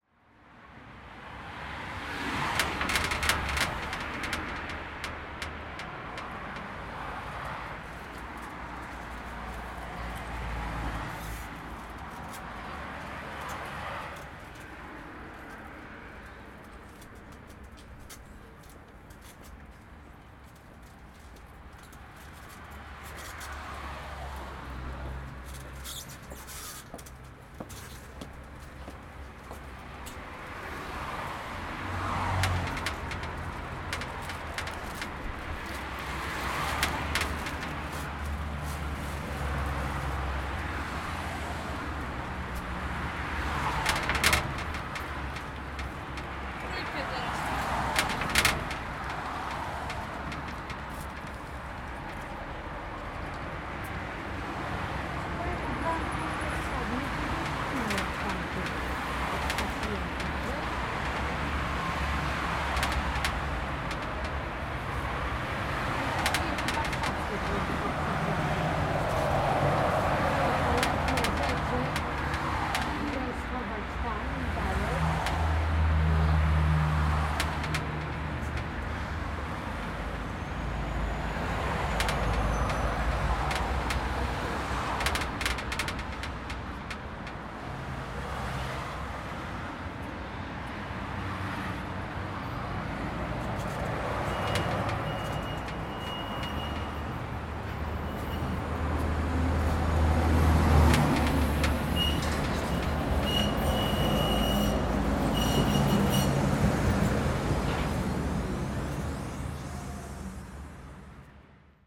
Śródmieście, Gdańsk, Polska - Rattle
A sheet of plexi glass rattling to the passing by cars.